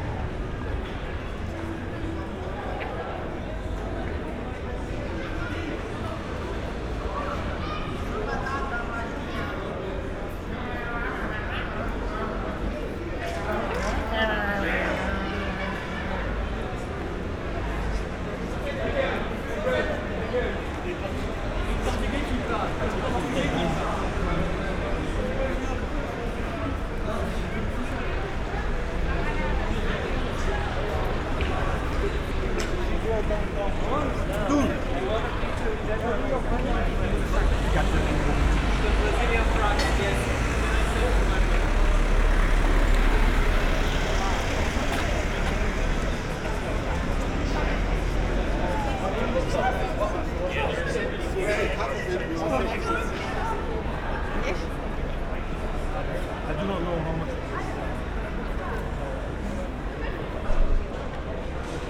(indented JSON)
{
  "title": "Rue Philippe II, Uewerstad, Luxembourg - weekend ambience",
  "date": "2014-07-04 21:30:00",
  "description": "pedestrian area, Rue Philippe II, many teenagers are gathering here\n(Olympus LS5, Primo EM172)",
  "latitude": "49.61",
  "longitude": "6.13",
  "altitude": "303",
  "timezone": "Europe/Luxembourg"
}